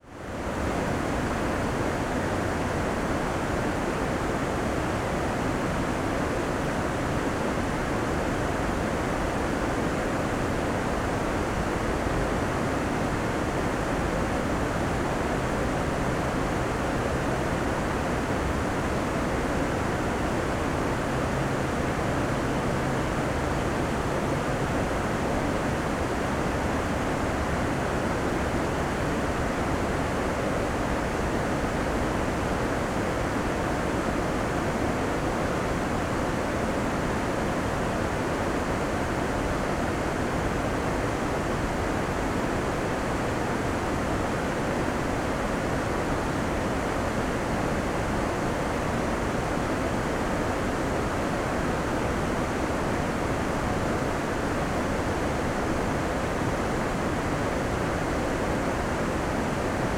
Limburg an der Lahn, Deutschland - flow of river Lahn
flow oth the river at the embankment of the water mill
(Sony PCM D50, DPA4060)